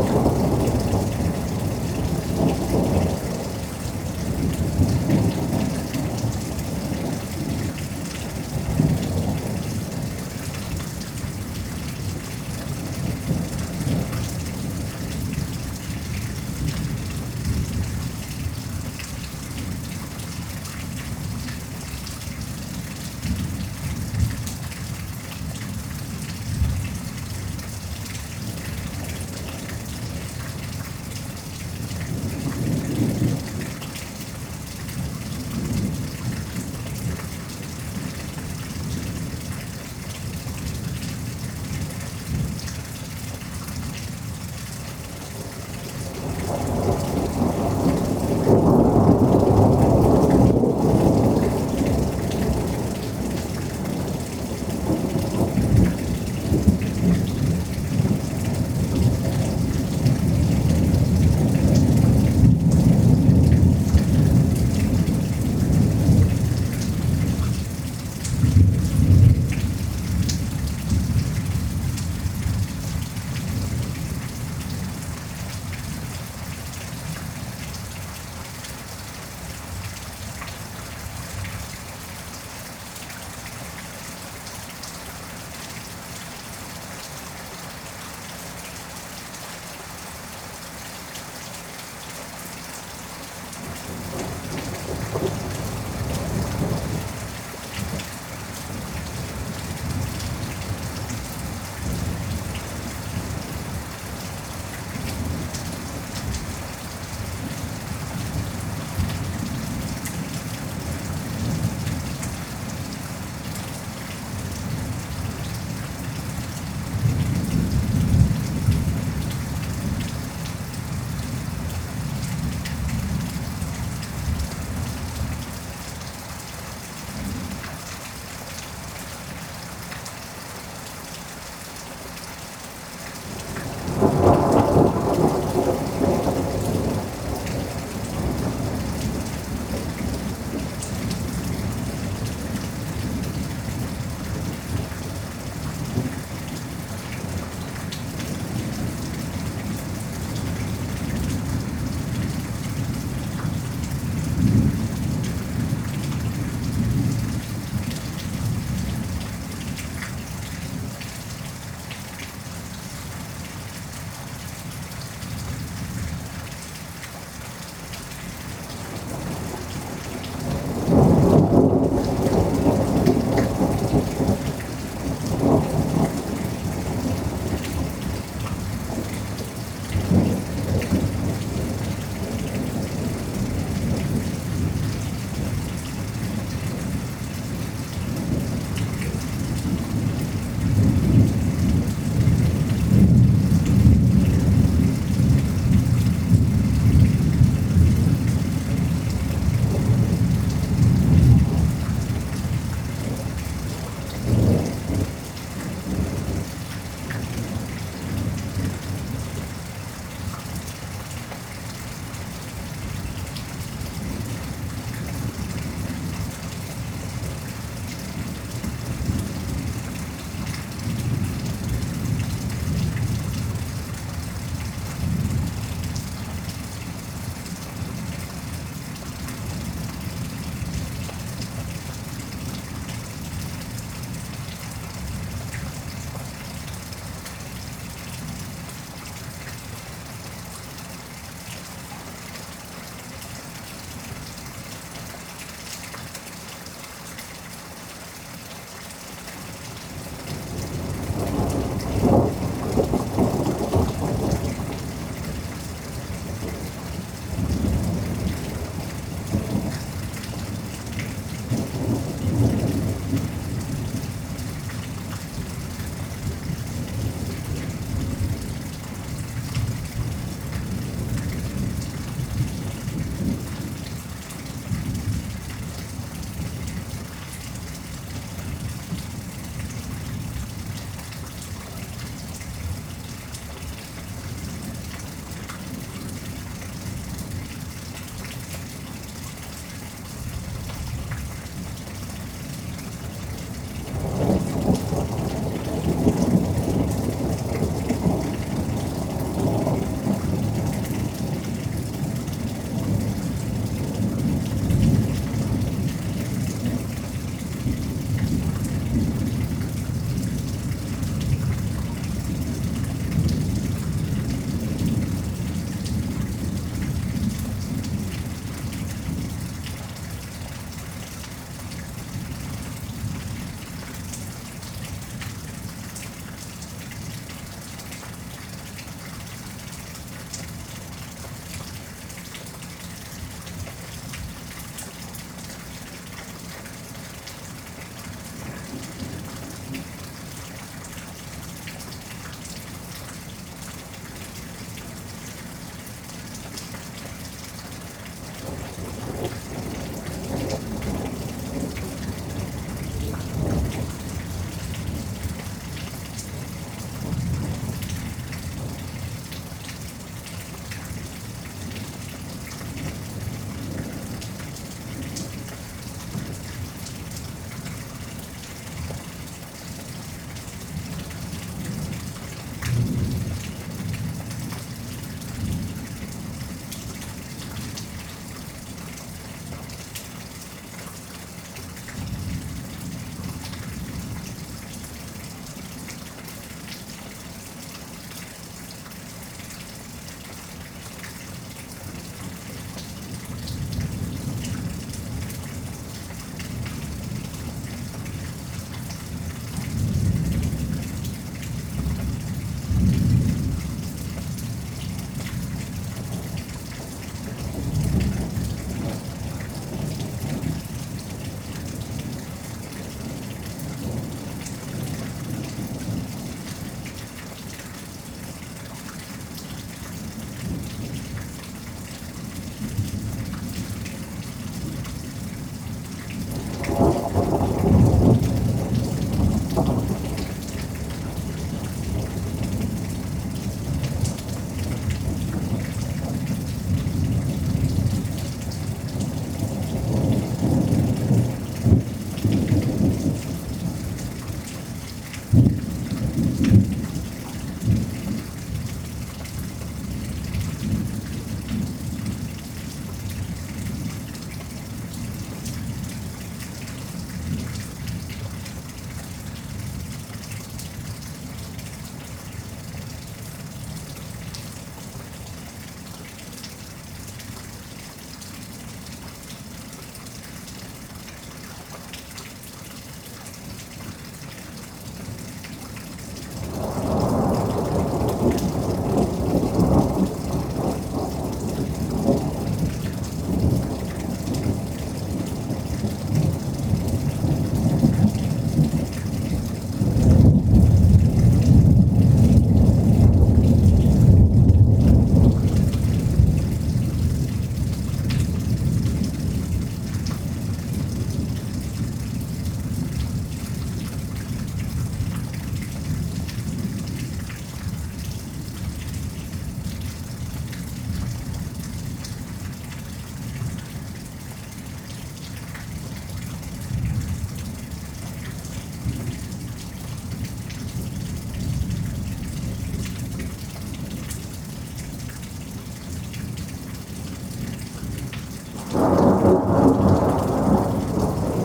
Recorded with a pair of DPA4060s and a Sound Devices MixPre-3.